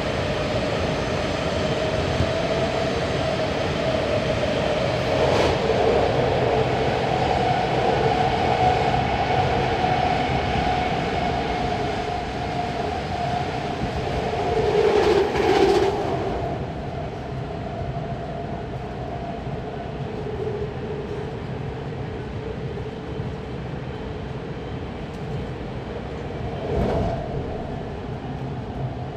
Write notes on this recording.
San Francisco, Embarcadero Bart station, taking subway towards the West Oakland Bart Station, under the San Franciscan bay